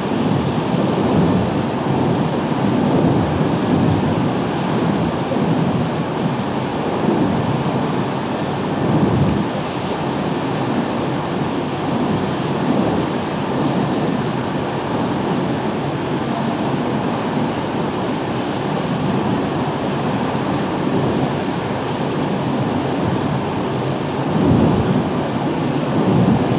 {
  "title": "Carron Valley Reservoir Dam, Denny, UK - Waterway Ferrics Recording 003",
  "date": "2020-07-11 18:05:00",
  "description": "Recorded with a pair of DPA4060s and a Sound Devices MixPre-3.",
  "latitude": "56.03",
  "longitude": "-4.06",
  "altitude": "222",
  "timezone": "Europe/London"
}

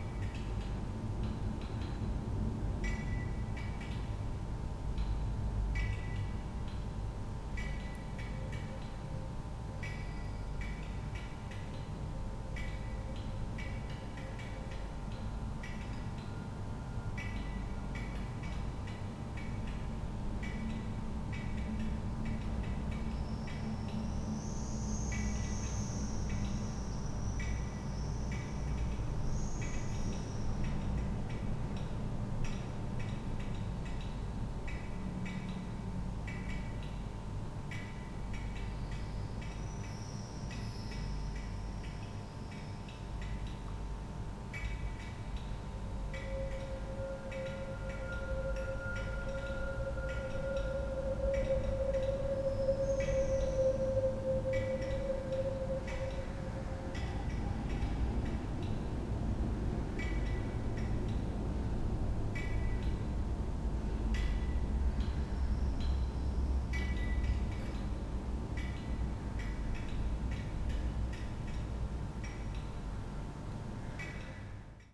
{
  "title": "Tallinn, Pärnu maantee",
  "date": "2011-07-09 08:50:00",
  "description": "hotel G9 stairway hall, defect fluorescent tube",
  "latitude": "59.44",
  "longitude": "24.76",
  "altitude": "11",
  "timezone": "Europe/Tallinn"
}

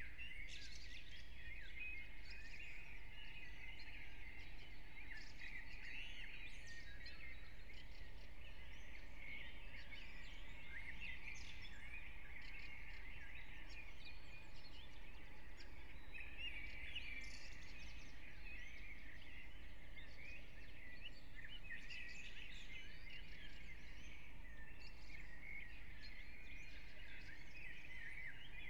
{
  "title": "Brno, Lužánky - park ambience",
  "date": "2021-04-18 04:15:00",
  "description": "04:15 Brno, Lužánky\n(remote microphone: AOM5024/ IQAudio/ RasPi2)",
  "latitude": "49.20",
  "longitude": "16.61",
  "altitude": "213",
  "timezone": "Europe/Prague"
}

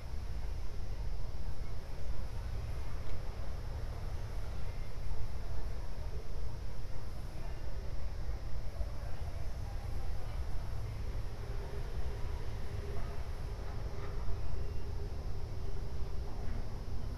{
  "title": "Ascolto il tuo cuore, città. I listen to your heart, city. Several chapters **SCROLL DOWN FOR ALL RECORDINGS** - Summer afternoon with cello in background in the time of COVID19 Soundscape",
  "date": "2020-07-07 19:30:00",
  "description": "\"Summer afternoon with cello in background in the time of COVID19\" Soundscape\nChapter CXV of Ascolto il tuo cuore, città. I listen to your heart, city\nTuesday, July 7th 2020, one hundred-nineteen day after (but day sixty-five of Phase II and day fifty-two of Phase IIB and day forty-six of Phase IIC and day 23rd of Phase III) of emergency disposition due to the epidemic of COVID19.\nStart at 7:31 p.m. end at 8:21 a.m. duration of recording 50’00”",
  "latitude": "45.06",
  "longitude": "7.69",
  "altitude": "245",
  "timezone": "Europe/Rome"
}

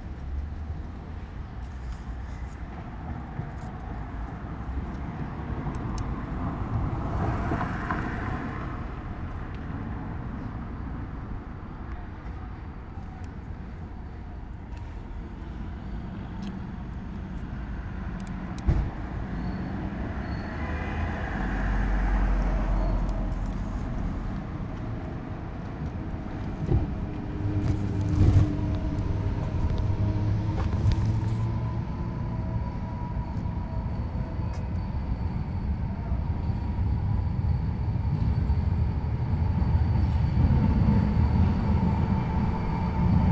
{
  "title": "leipzig lindenau, odermannstraße, nahe dem npd-bureau.",
  "date": "2011-09-15 13:12:00",
  "description": "in der odermannstraße vor einem geheimnisvollen blechzaun in der nähe des npd-bureaus. autos, straßenbahnen...",
  "latitude": "51.34",
  "longitude": "12.33",
  "altitude": "113",
  "timezone": "Europe/Berlin"
}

